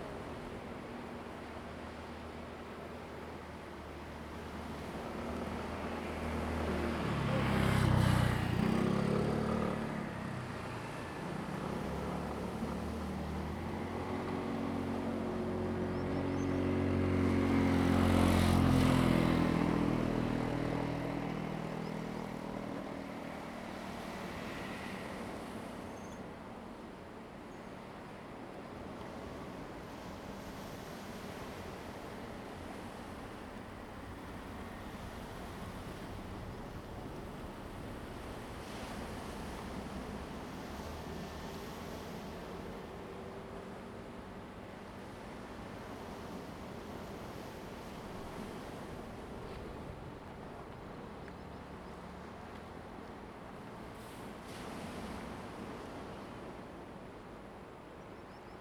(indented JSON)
{
  "title": "Jimowzod, Koto island - On the road",
  "date": "2014-10-29 17:36:00",
  "description": "On the coast, Traffic Sound, Sound of the waves\nZoom H2n MS +XY",
  "latitude": "22.03",
  "longitude": "121.55",
  "altitude": "14",
  "timezone": "Asia/Taipei"
}